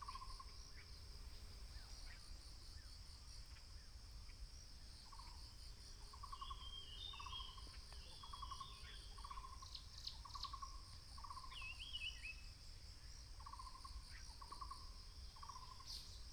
Pasture Yen Family, 埔里鎮桃米里 - Bird sounds
Bird sounds
Binaural recordings
Sony PCM D100+ Soundman OKM II
Puli Township, 水上巷28號, 28 April 2016